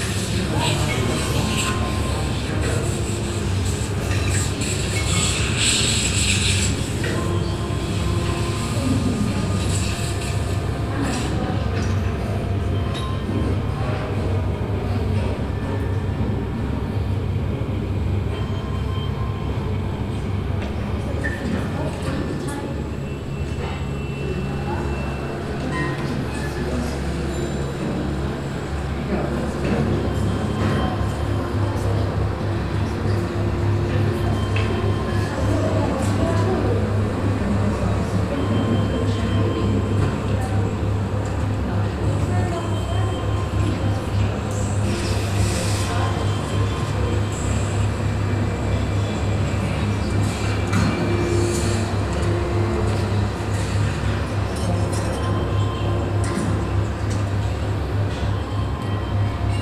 inside the museum at the exhibition nam june paik award - here sound of a videoinstallation showing parallel different work situations
soundmap d - social ambiences, art spaces and topographic field recordings
Düsseldorf, Germany, January 2011